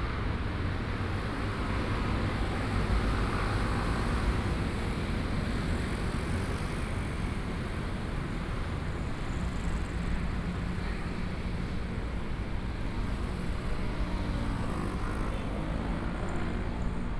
Walking across the different streets, Footsteps, Traffic Sound, Motorcycle Sound, Pedestrians, Construction site sounds, Binaural recordings, Zoom H4n+ Soundman OKM II